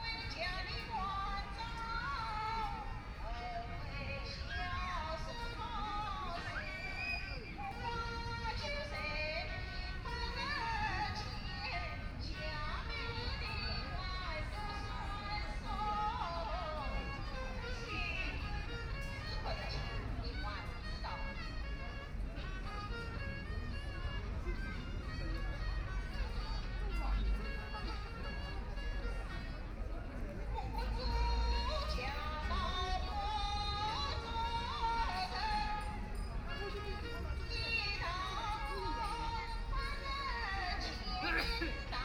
和平公園虹口區 - woman singing
A woman is singing the corner, A lot of people are playing cards behind, Binaural recording, Zoom H6+ Soundman OKM II
23 November, 10:55